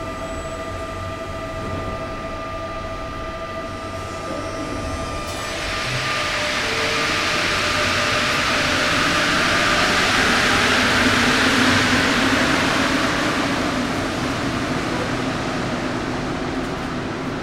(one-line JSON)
{"title": "Hôtel de Ville L. Pradel, Lyon, France - Métro lyonnais", "date": "2003-09-30 13:40:00", "description": "Près du distributeur de ticket dans le métro . Arrivée départ des rames, bruits du distributeur pas des passants. Extrait CDR gravé en 2003.", "latitude": "45.77", "longitude": "4.84", "altitude": "182", "timezone": "Europe/Paris"}